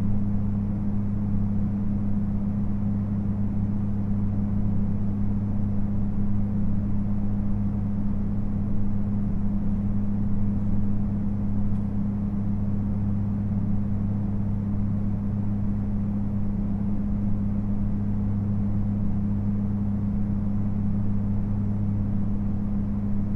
Getty Center Dr, Los Angeles, CA, USA - Ambient
Ambient capture of Getty Parking lot, level 6. HVAC drones and light car noise.
Recorded with DPA 4060 in boundary layer AB configuration into Nagra Seven.